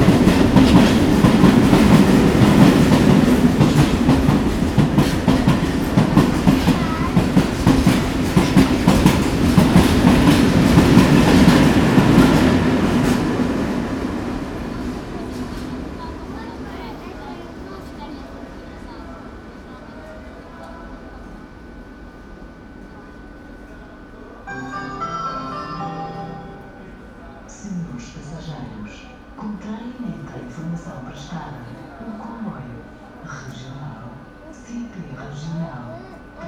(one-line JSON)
{"title": "Coimbra-B, Coimbra, Portugal - Coimbra B train station", "date": "2019-08-07 19:13:00", "description": "trains, kids singing and playing games on the platform, train announcements, people talking", "latitude": "40.22", "longitude": "-8.44", "timezone": "GMT+1"}